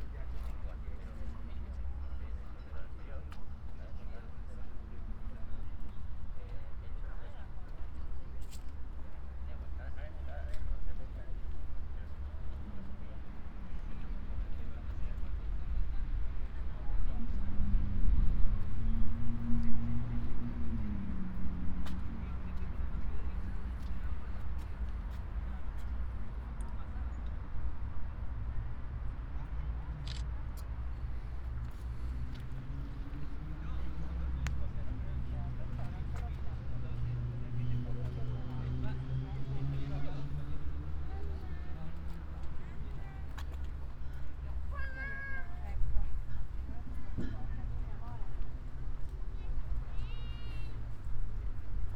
Ascolto il tuo cuore, città. I listen to your heart, city. Chapter CXXXIII - Almost sunset at Valentino park in the time of COVID19: soundwalk
"Almost sunset at Valentino park in the time of COVID19": soundwalk
Chapter CXXXIII of Ascolto il tuo cuore, città. I listen to your heart, city
Saturday, September 26th 2020. San Salvario district Turin, to Valentino, walking in the Valentino Park, Turin, five months and fifteen days after the first soundwalk (March 10th) during the night of closure by the law of all the public places due to the epidemic of COVID19.
Start at 6:00 p.m. end at 7:00 p.m. duration of recording 01:00:15. Local sunset time 07:17 p.m.
The entire path is associated with a synchronized GPS track recorded in the (kmz, kml, gpx) files downloadable here: